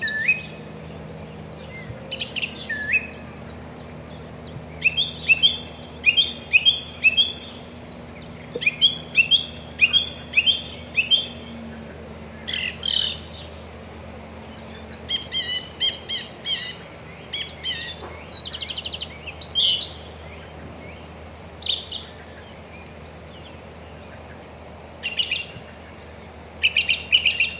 Chemin des Ronferons, Merville-Franceville-Plage, France - Different birds
Différents birds during the Covid-19 pandemic, Zoom H6 & Rode NTG4+